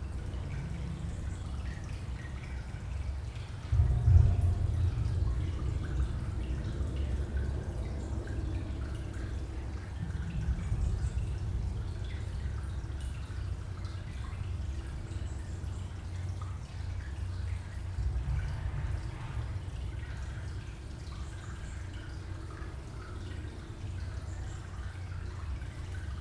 Rue Louis Hérold, Toulouse, France - resonance concrete
water evacuation tunel, resonance concrete structure